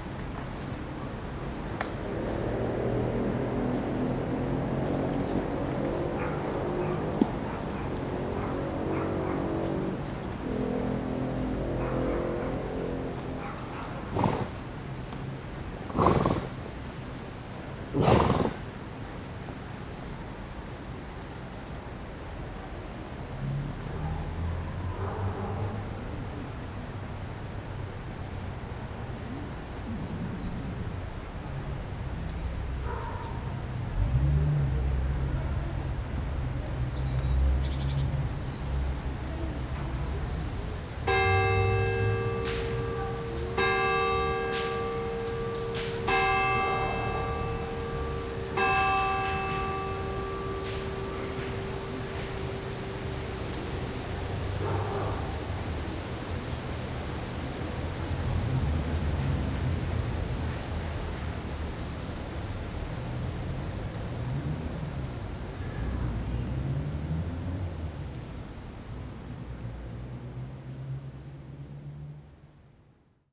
Chaumont-Gistoux, Belgique - Horses
In the center of Gistoux, horses are exhaust with the flies. Regularly, they chase these flies with noisy fblblblbl. In the background, the Gistoux bells ring four.
15 August 2016, ~16:00